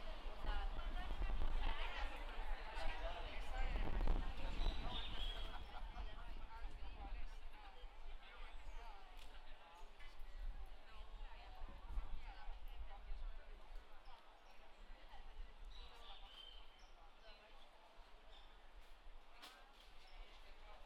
Cra., Medellín, Antioquia, Colombia - Kiosko, Universidad de Medellín
Descripción
Sonido tónico: Kiosko de comunicación
Señal sonora: Personas en hora de almuerzo
Grabado por Santiago Londoño y Felipe San Martín
Región Andina, Colombia, 23 September